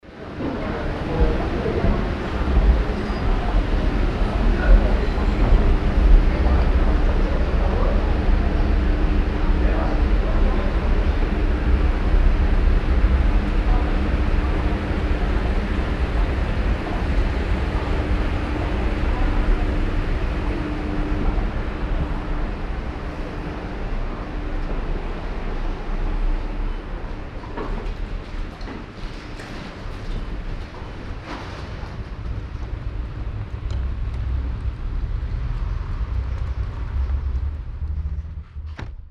mettmann, talstraße, einkaufscenter, rolltreppe
in einkaufspassage, morgens, leere kleine rolltreppe
soundmap nrw: social ambiences/ listen to the people - in & outdoor nearfield recordings